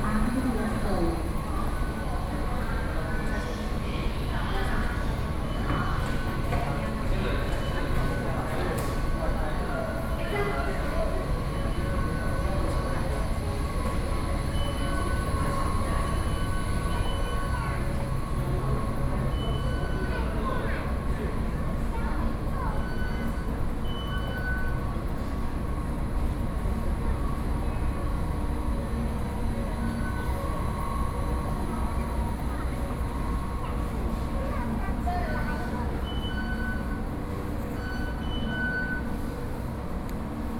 Sanchong, New Taipei city - Taipei Bridge MRT stations